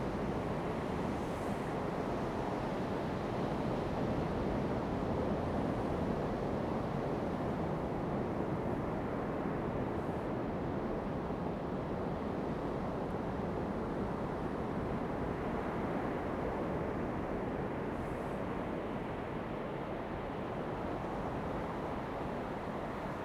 Jinhu Township, Kinmen County - At the beach
At the beach, Sound of the waves
Zoom H2n MS+XY